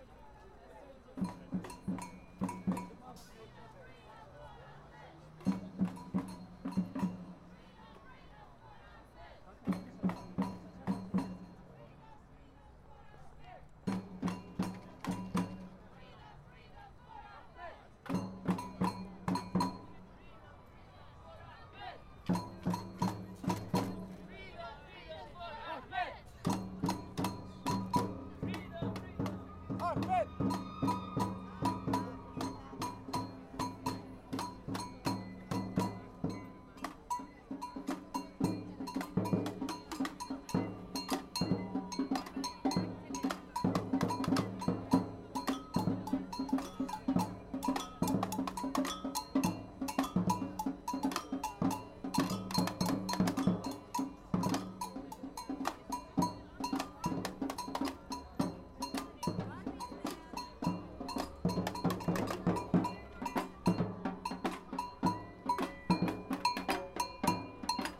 The Demonstration for Ahmed H. by Migszol (see other sounds next to this one) is forming, a samba group starts to play, shouts like 'Freedom for Ahmed'.